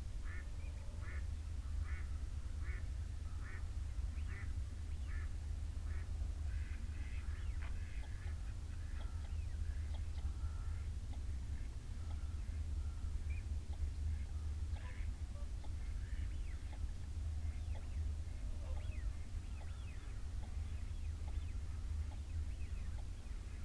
{
  "title": "Dumfries, UK - whooper swan soundscape",
  "date": "2022-01-30 05:50:00",
  "description": "whooper swan soundscape ... dummy head with binaural in the ear luhd mics to zoom ls14 ... bird calls from ... canada geese ... shoveler ... snipe ... teal ... wigeon ... mallard ... time edited unattended extended recording ...",
  "latitude": "54.98",
  "longitude": "-3.48",
  "altitude": "8",
  "timezone": "Europe/London"
}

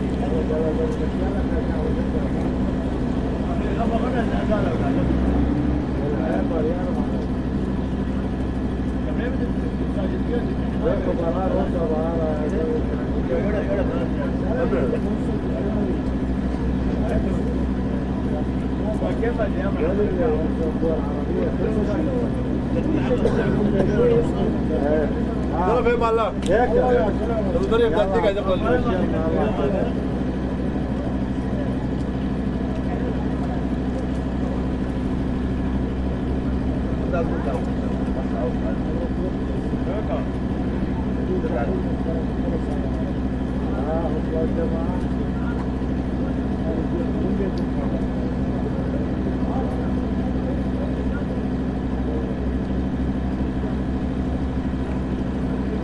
{
  "title": ":jaramanah: :electricity shut off: - twentyone",
  "date": "2008-10-02 03:38:00",
  "latitude": "33.49",
  "longitude": "36.33",
  "altitude": "674",
  "timezone": "Asia/Damascus"
}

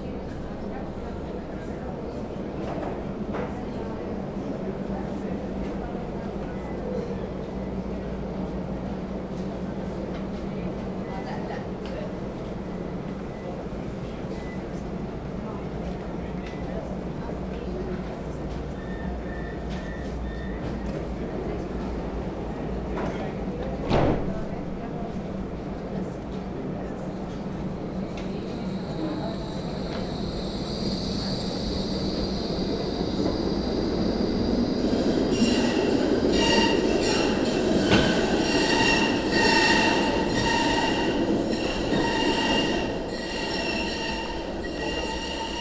{
  "title": "Antwerpen, Belgique - Groenplaats metro station",
  "date": "2018-08-04 16:00:00",
  "description": "A long walk into the Groenplaats metro station (it means the green square, but today nothing is green here !). Starting with an accordion player. After, some metros passing in the tunnel, with strong rasping, and at the end, a girl singing something I think it's Alela Diane, but I'm not quite sure (to be completed if you recognize !).",
  "latitude": "51.22",
  "longitude": "4.40",
  "altitude": "9",
  "timezone": "GMT+1"
}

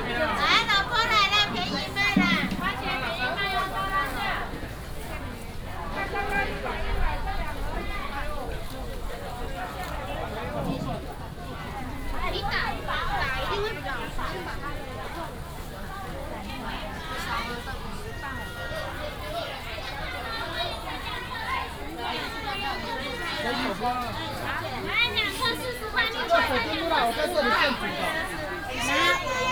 {
  "title": "竹東中央市場, Zhudong Township - The sound of vendors",
  "date": "2017-01-17 11:18:00",
  "description": "Walking in the indoor and outdoor markets",
  "latitude": "24.74",
  "longitude": "121.09",
  "altitude": "123",
  "timezone": "GMT+1"
}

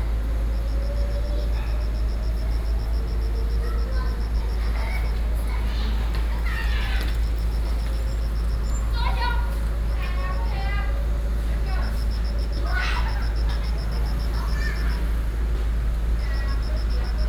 {
  "title": "Shuangxi, New Taipei City - Kids playing ball",
  "date": "2012-06-29 17:14:00",
  "latitude": "25.04",
  "longitude": "121.87",
  "altitude": "35",
  "timezone": "Asia/Taipei"
}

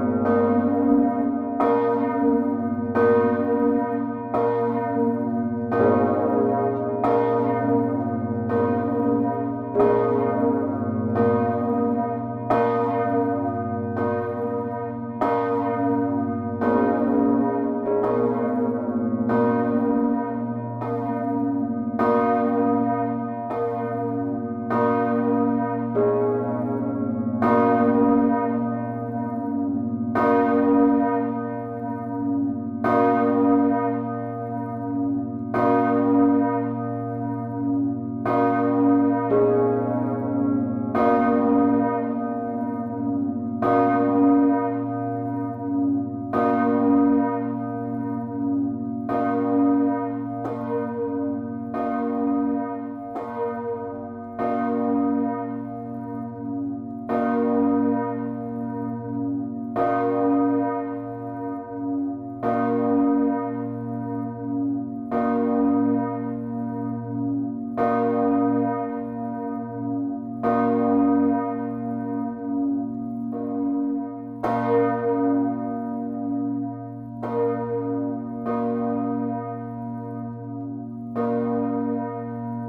{
  "title": "Amiens, France - Amiens cathedral bells",
  "date": "2011-04-11 11:00:00",
  "description": "Archive recording of the two beautiful bells of the Amiens cathedral. Recorded into the tower, with an small Edirol R07. It's quite old. The bells are 4,5 and 3,6 tons.",
  "latitude": "49.89",
  "longitude": "2.30",
  "altitude": "35",
  "timezone": "Europe/Paris"
}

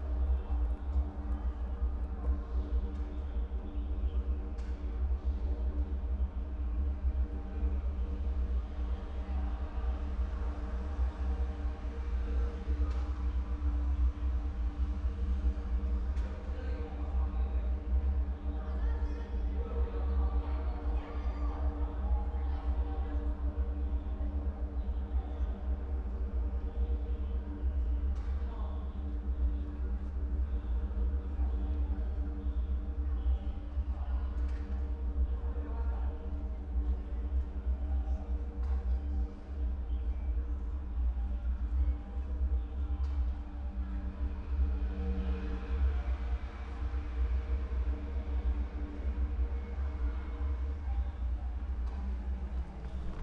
{
  "title": "112台灣台北市北投區學園路1號 - 台北藝術大學 鐘樓 TNUA Big Bell",
  "date": "2012-10-18 15:36:00",
  "description": "大鐘, Big Bell",
  "latitude": "25.13",
  "longitude": "121.47",
  "altitude": "89",
  "timezone": "Asia/Taipei"
}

